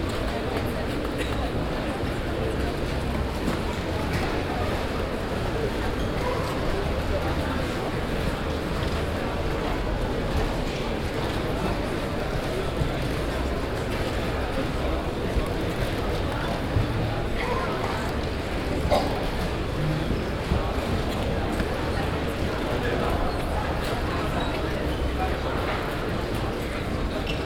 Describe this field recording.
inside the festival circus tent at the 39th moers festival - audience atmosphere and an announcement, soundmap nrw - topographic field recordings and social ambiences